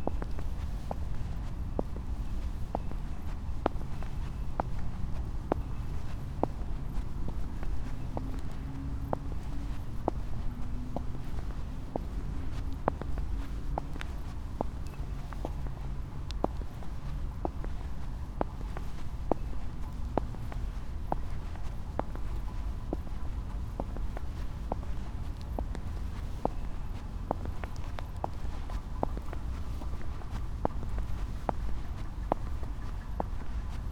inside the pool, mariborski otok - autumn, red shoes, blue pool, wind rattle, walking